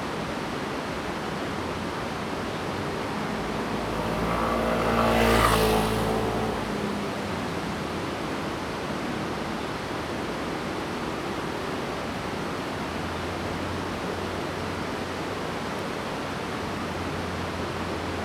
瀑布路, 烏來里, Wulai District - Sound of water and bird
Facing the waterfall, Traffic sound, Birds call
Zoom H2n MS+ XY
New Taipei City, Taiwan, 5 December 2016